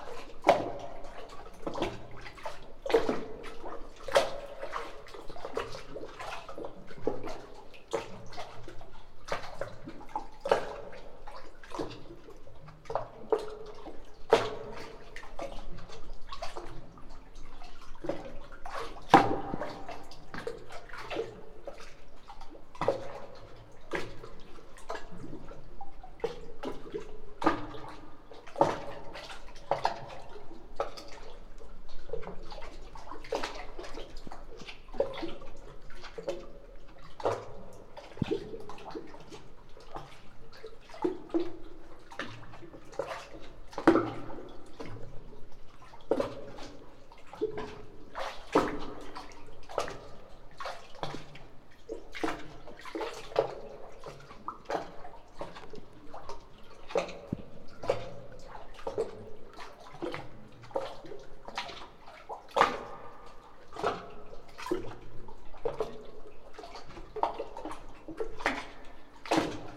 Kuopio, Finland - harbour-wave-boat-rhythm-play
During ANTI festival in Kuopio 2016 the artist LAB launched the concept of 'overmapping'. This also contains a 'Sound memory' layer. This recording was a memory of many locals.
28 October